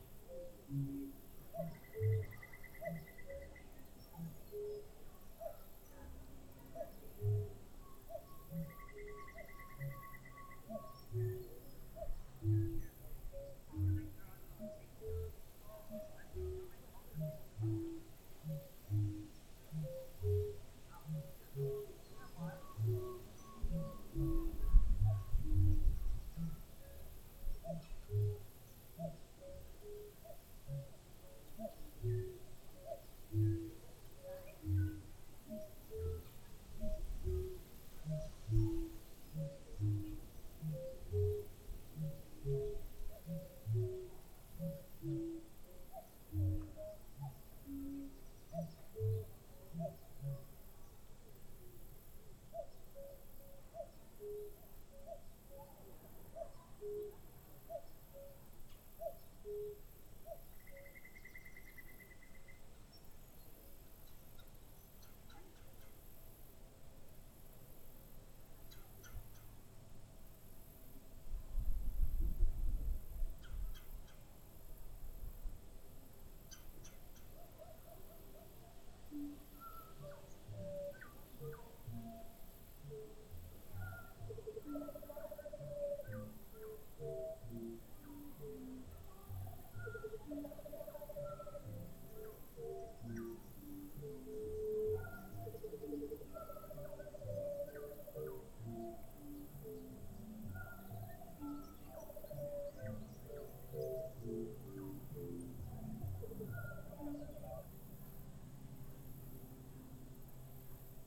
Ghost Barn, Bentonville, Arkansas, USA - Ghost Barn
Sounds emanating from the Ghost Barn at Coler Mountain Bike Preserve captured from the homestead lawn. Leaves rustle overhead.
Benton County, Arkansas, United States, 8 October 2021, 11:05